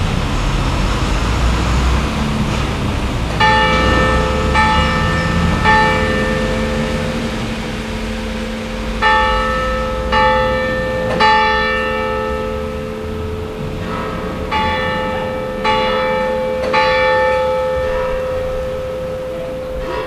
Saint Laurent dAigouze - Place de la République.
The Bells, minidisc recording from 1999.